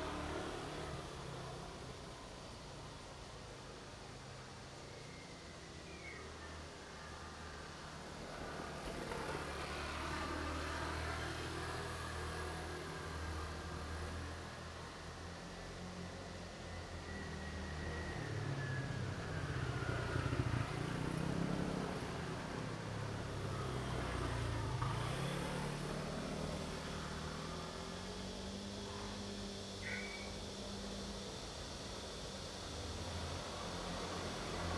Ln., Xinzhong N. Rd., Zhongli Dist. - Railroad Crossing
Narrow alley, Cicada cry, Traffic sound, The train runs through, Railroad Crossing
Zoom H2n MS+XY